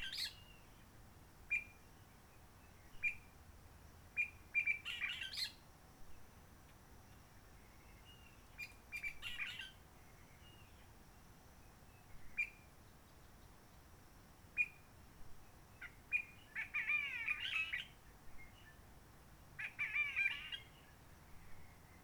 Roche Merveilleuse, Réunion - Merle de lîle de la Réunion.
Merle de la Réunion.
Les piafs de l'île de la Réunion ont eu un heureux événement, d'un coup les humains se sont arrêté d'envahir la forêt avec des marmailles hurlants, on arrêté de se promener en ULM et en hélico, depuis le 19 mars 2020 c'est calme même quand il fait beau, et depuis des années on n'avait pas pu faire l'expérience du beau temps, ciel bleu + soleil en même temps que les chants d'oiseaux. Mais les oiseaux ne sont pas si actifs que cela, ils n'ont pas encore repris l'habitude d'exploiter cette partie de la journée pour leur communications longue distance.
La Réunion, France, 28 March 2020, ~11:00